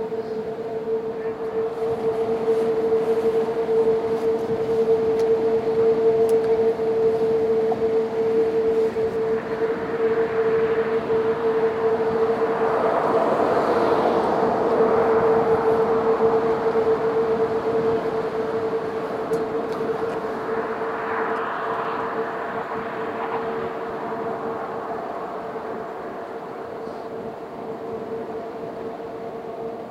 Le vent passant à travers les barrières en métal du pont fait vibrer sa structure ce qui créé cet étrange son envoutant...
The wind passing by the bridge metal structure & fences make it vibrate resulting in this beautiful droning sound...
/zoom h4n intern xy mic
France, 20 July 2016